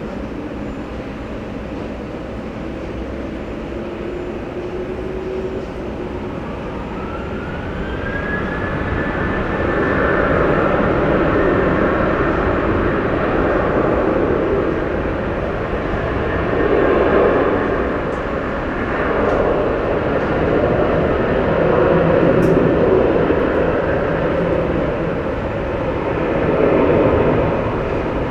Smoking Area
Aéroport dOrly - Paris
Attente dans la zone fumeur avant le départ pour Berlin